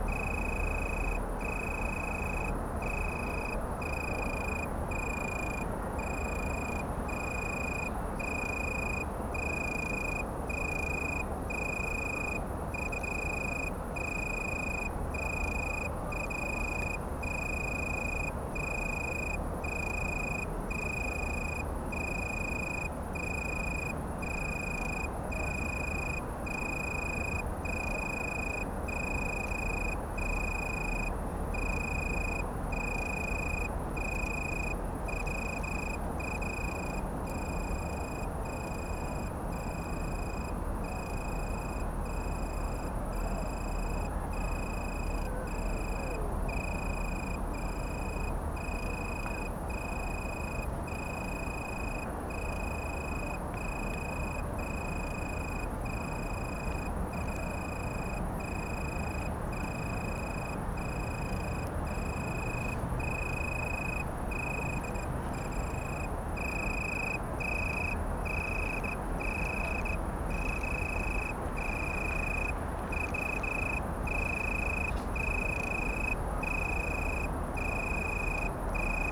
Rheinufer, Köln - Weinhähnchen (Oecanthus pellucens)
Italian tree cricket, Weinhähnchen, (Oecanthus pellucens) closeup in a bush
(Sony PCM D50)
4 August, 22:50